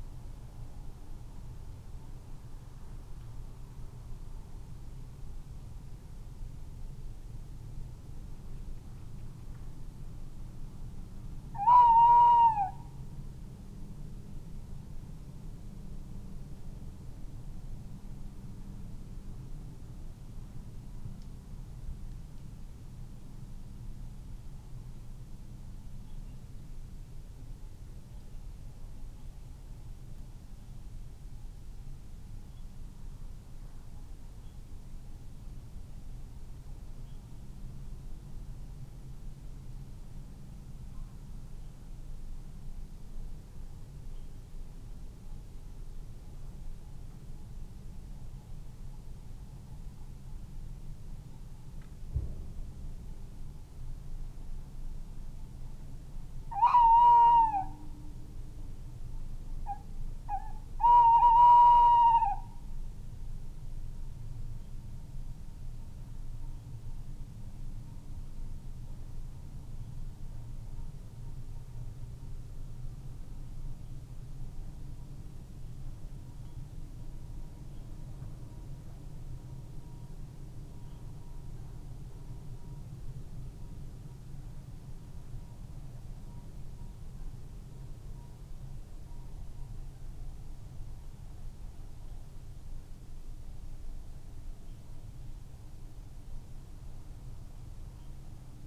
Unnamed Road, Malton, UK - tawny owls ...
Tawny owls ... male territorial song ... later ... tremulous hoot call ... SASS ...